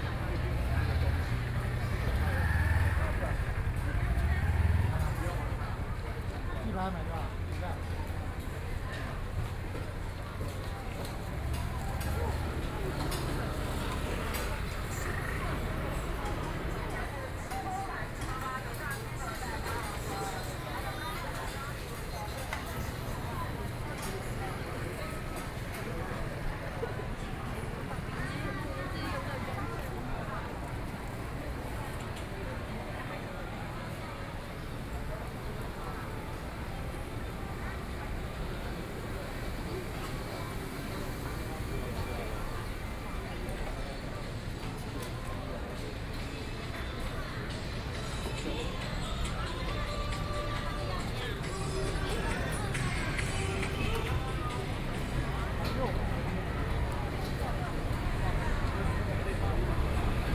Neihu Rd., Taipei City - Night market
Walk in the park, Traffic Sound, Night market, A variety of shops and tapas
Binaural recordings
Neihu District, Taipei City, Taiwan